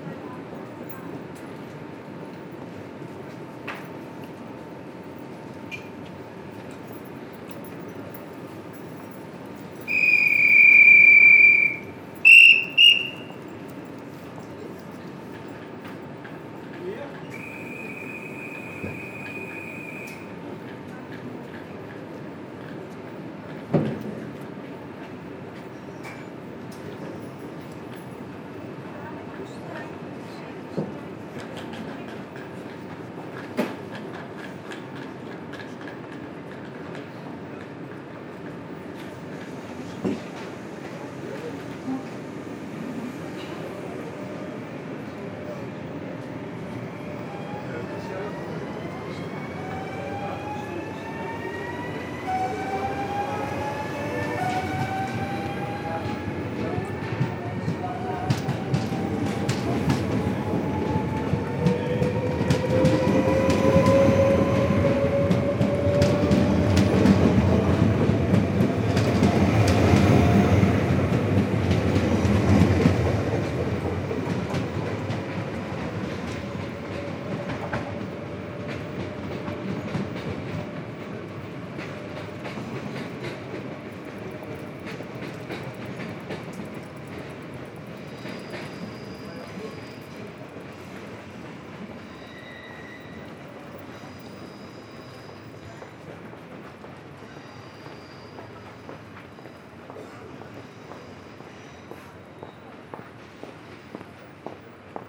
Amsterdam, Nederlands - Amsterdam station and train to Zandvoort
A long ride. At the beginning, endless ballet of passing trains in the Amsterdam Central station, and after, a travel into the Zandvoort-Aan-Zee train, stopping in Haarlem. The end of the recording is in the Zandvoort village, near the sea.